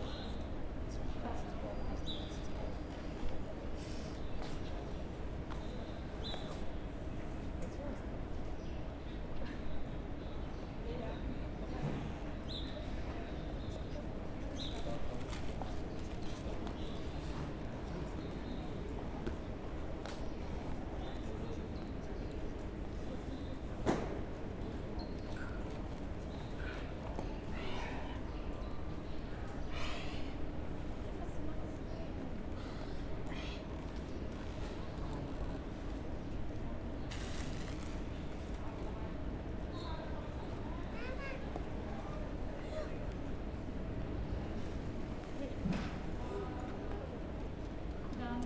Frankfurt Airport (FRA), Frankfurt am Main, Deutschland - Airport of the Birds, Terminal 1, Hall B
During the Corona times there is not much traffic at the airport. The birds took over. Sometimes a crew crosses the hall, some passengers wait to drop their baggage, sometimes even with children. Or disabled people in wheel chairs are waiting for help, some talk to him, some noises in the background.